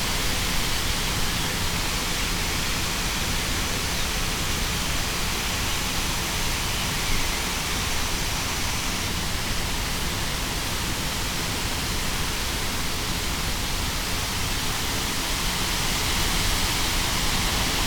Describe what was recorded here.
recorded on a field road, in front of a few big willows, on a windy day. (roland r-07)